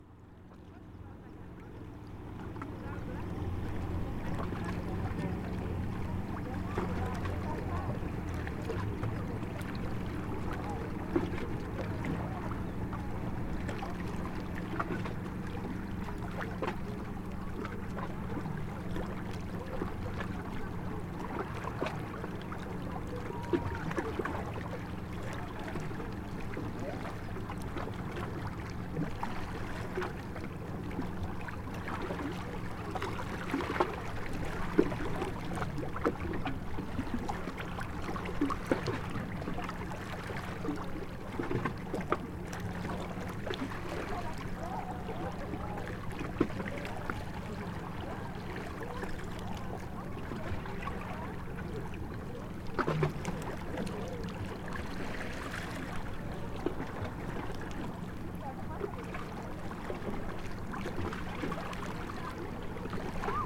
Port de Châtillon73310 Chindrieux, France - Au bord de l'eau
Sur la digue Sud du port de Châtillon, clapotis dans les rochers, conversations de plage en arrière plan, le lac du Bourget s'étend de toute sa longueur.